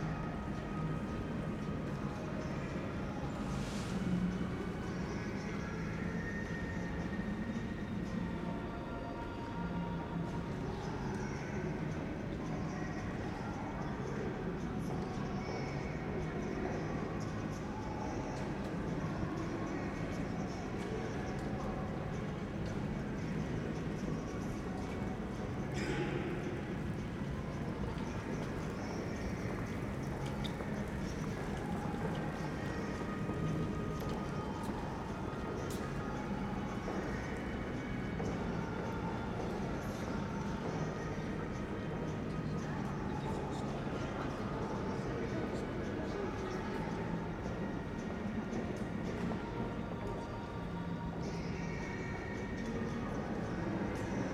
Exchange Arcade, Nottingham, UK - Reverberant atmosphere inside the Exchange Arcade
The oldest shopping mall in Nottingham built in 1929.